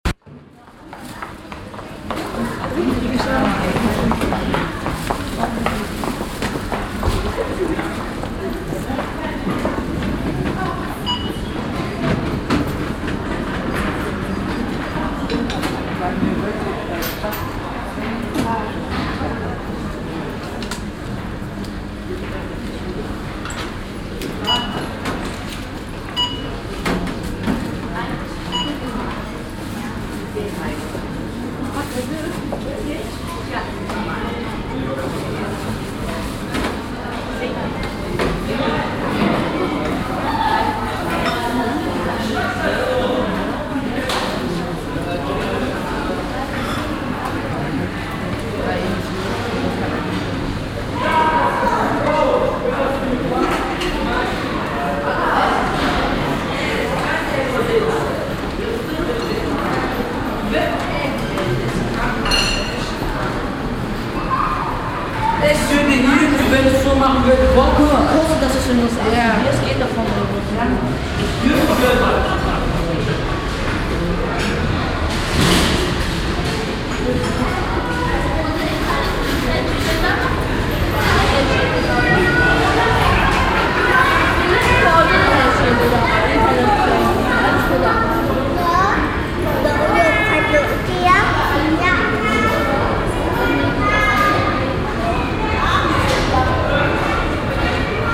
monheim, rathaus center, besucher + einkaufswagen
nachmittags in der einkaufspassage rathaus center, passanten, jugendliche, eine lange reihe von einkaufwagen
soundmap nrw:
social ambiences, topographic fieldrecordings